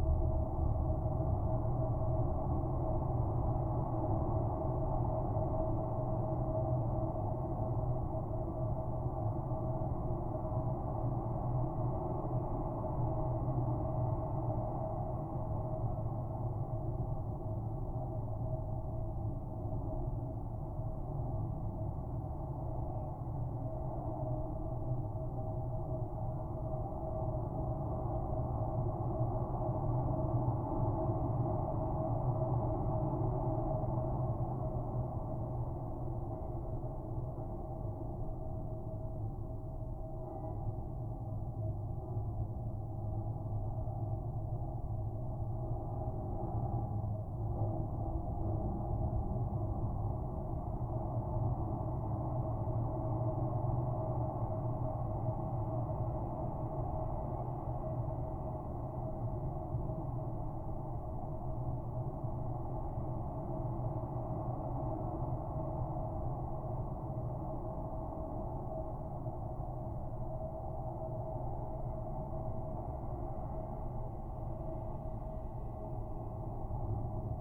Recorded with LOM contact mics. Traffic jam makes the bridge vibrate constantly producing low frequencies and harmonics.
Zuid-Holland, Nederland, 2 August 2021